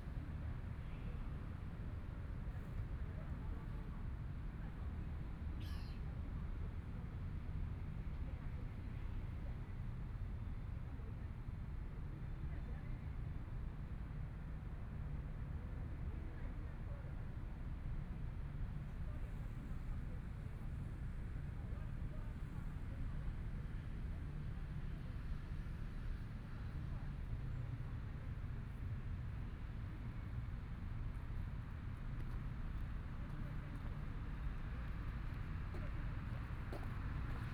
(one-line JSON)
{"title": "苓雅區仁政里, Kaoshiung City - Late at night in the park", "date": "2014-05-14 11:49:00", "description": "Running and walking people, Traffic Sound", "latitude": "22.62", "longitude": "120.30", "altitude": "4", "timezone": "Asia/Taipei"}